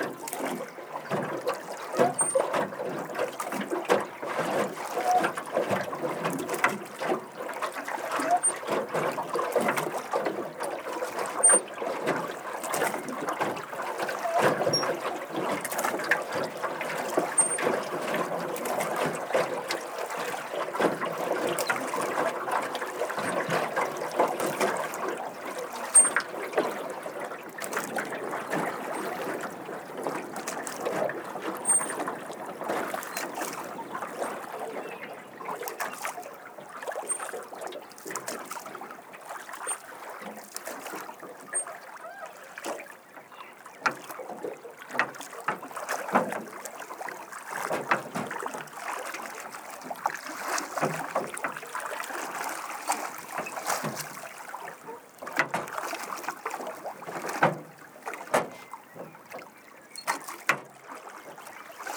ruderboot, see, wasser, plätschern
Baden-Württemberg, Deutschland, European Union